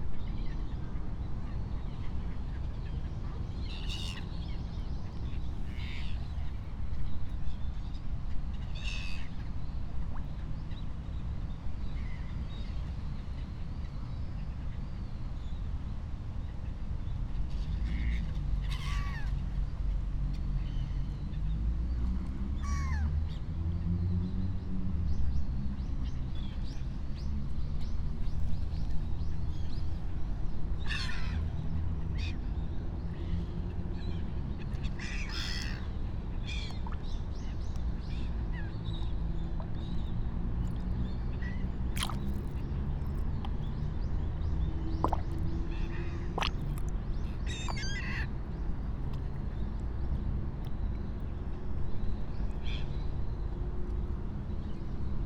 kiyosumi gardens, tokyo - the sound of a fish mouths, elongating out of the cloudy pond
Tokyo, Japan, 10 November 2013